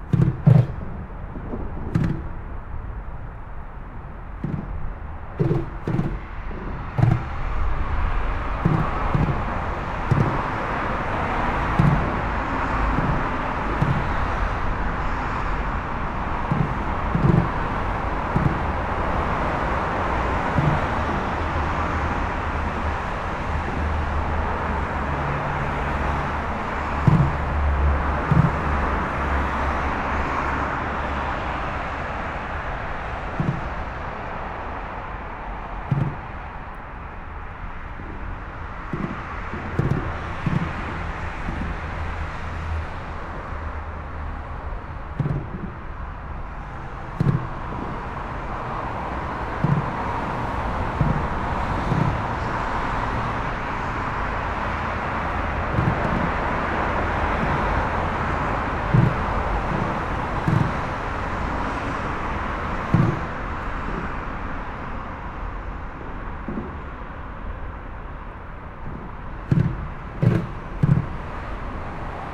Machelen, Belgium - Vilvoorde viaduct
Below the Vilvoorde viaduct. Sound of the traffic. It's not the most beautiful place of brussels, its quite aggressive and hideous.